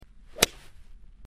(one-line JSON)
{"title": "Kanndorf, Golf", "description": "Christian Schultze, 168 meters using a 5 iron...", "latitude": "49.77", "longitude": "11.25", "altitude": "477", "timezone": "GMT+1"}